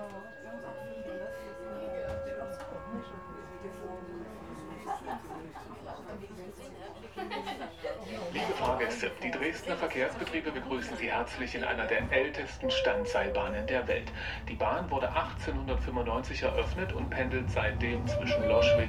Loschwitz, Dresden, Deutschland - ropeway, Standseilbahn
ropeway ride from Dresden Loschwitz to Weisser Hirsch
(Sony PCM D50)